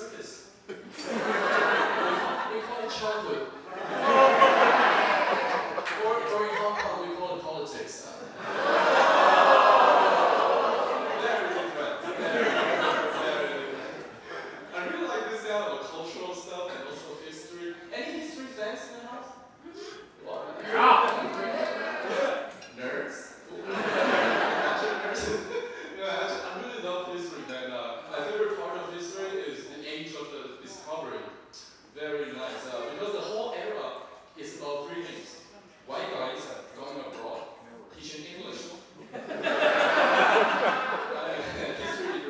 No., Chenggong 2nd Street, Zhubei City, Hsinchu County, Taiwan - Stand-up Comedy at Ruban Bistro
A few minutes from Chiu Ka-Un's set, part of a comedy night hosted by Sam Yarbs. The audience eats and drinks while enjoying the performance at Ruban Bistro (in operation from 2019-2021). Stereo mics (Audiotalaia-Primo ECM 172), recorded via Olympus LS-10.
新竹縣, 臺灣